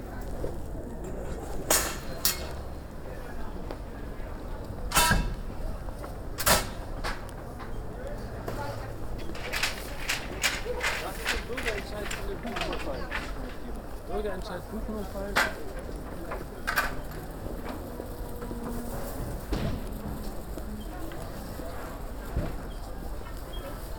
Marktstand wird abgebaut. Große Bergstraße. 31.10.2009 - Große Bergstraße/Möbelhaus Moorfleet
SEB Geldautomat-Haspa
Hamburg, Germany, 31 October 2009, 15:34